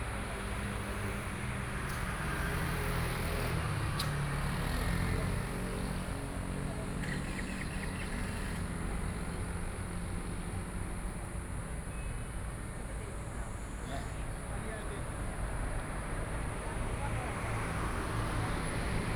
Shangzhi Rd., Hualien City - on the roadside
Selling vegetables on the roadside, Traffic Sound, Crowing sound